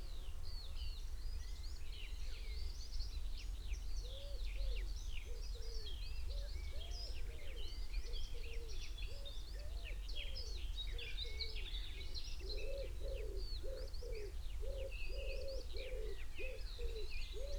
Malton, UK - blackcap song soundscape ...
blackcap song soundscape ... xlr SASS on tripod to ZoomH5 ... bird calls ... song ... from ... wood pigeon ... song thrush ... chaffinch ... whitethroat ... skylark ... crow ... great tit ... great spotted woodpecker ... roe deer after 34.30 mins .. ish ... extended unattended time edited recording ...
England, United Kingdom, June 2021